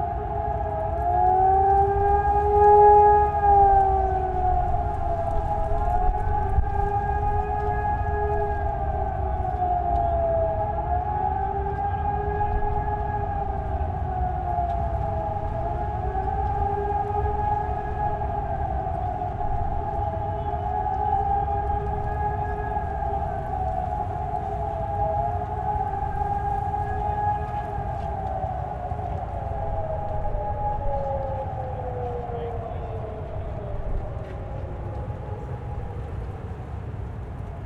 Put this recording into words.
snd part of the test, alarm signal